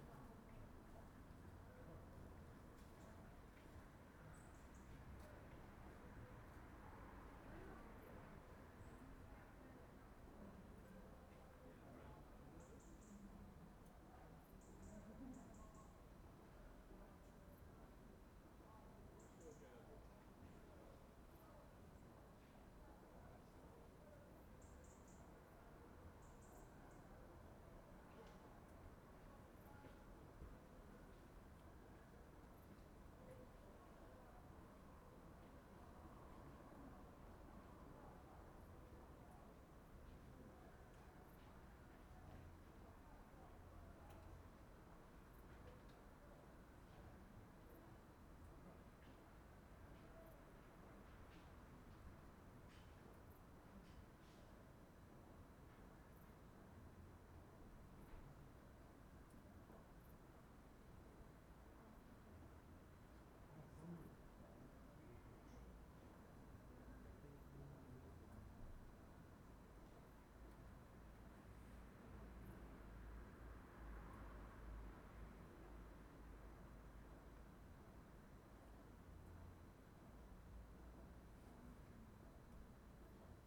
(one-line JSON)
{"title": "Montpelier train station, St Andrews, Bristol, UK - Montpelier train station at dusk", "date": "2020-08-27 20:25:00", "description": "Ambient sounds of a small train station, birds chirp, distant cars are heard, and sometimes the sounds of people walking over the metal bridge that crosses over the track, two trains come in (train 1 at 3:05 and train 2 at 17:12)\nRecorded with Roland R26 XY + Omni mics, only edit made was fade in/out", "latitude": "51.47", "longitude": "-2.59", "altitude": "43", "timezone": "Europe/London"}